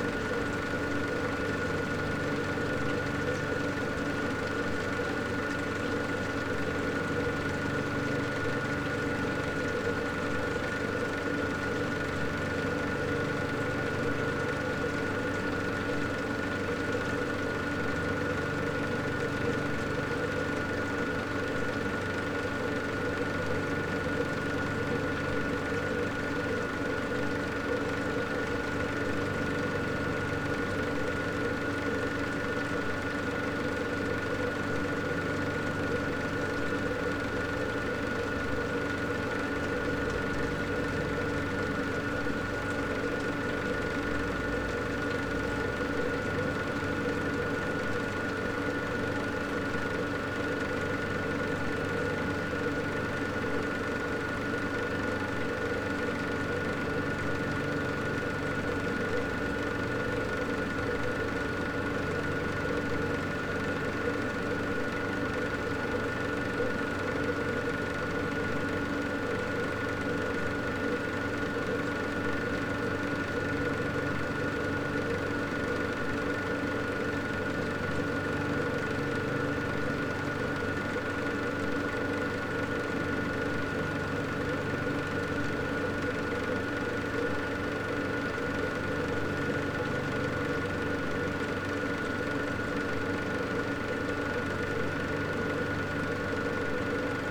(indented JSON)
{
  "title": "berlin: friedelstraße - the city, the country & me: water pump",
  "date": "2014-02-06 04:14:00",
  "description": "sewer works site, water pump, water flows into a gully\nthe city, the country & me: february 6, 2014",
  "latitude": "52.49",
  "longitude": "13.43",
  "timezone": "Europe/Berlin"
}